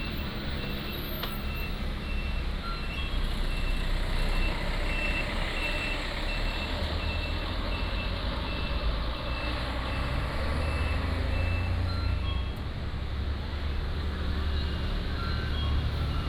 Fuxing Rd., Jinhu Township - next to the station
next to the station, Aircraft flying through, Traffic Sound
金門縣 (Kinmen), 福建省, Mainland - Taiwan Border, 4 November